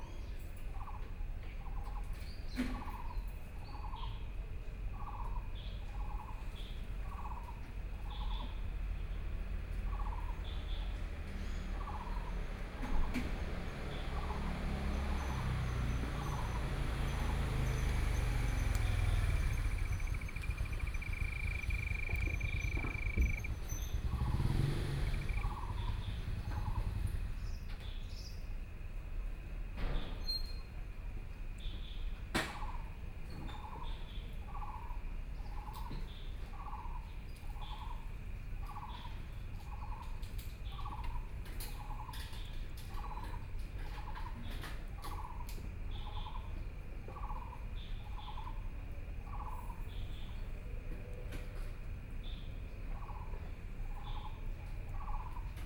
碧湖公園, Taipei City - Parks and Community
Frogs sound, Insects sound, Aircraft flying through, Traffic Sound
Neihu District, Taipei City, Taiwan, 4 May, 10:54